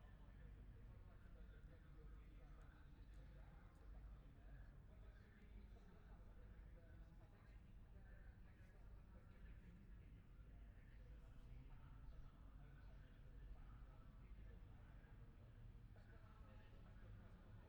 Silverstone Circuit, Towcester, UK - british motorcycle grand prix 2021 ... moto three ...
moto three qualifying one ... wellington straight ... dpa 4060s to Zoom H5 ...